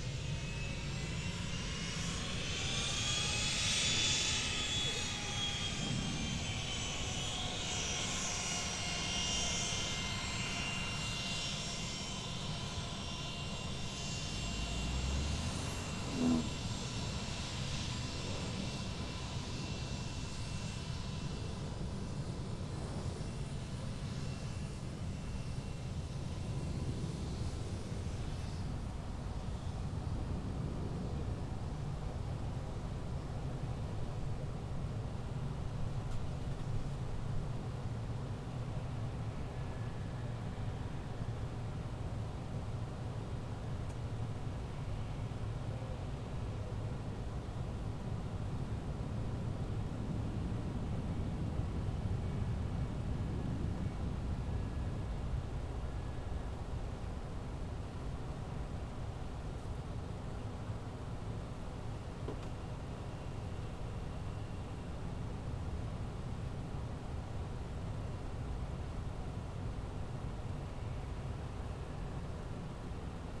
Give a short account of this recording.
A nice warm summer Independence Day evening at the Minneapolis/St Paul International Airport Spotters Park. Planes were landing and taking off on 30L (The close runway) 30R and takeoffs on 17 at the time.